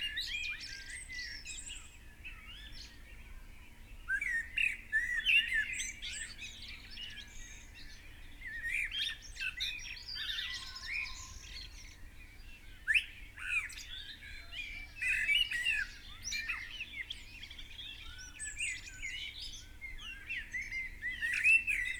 Unnamed Road, Malton, UK - blackbird song ... left ... right ... and centre ...
blackbird song ... left ... right ... and centre ... lavalier mics clipped to a bag ... placed in the crook of a tree ... bird call ... pheasant ...
10 April 2019, 05:43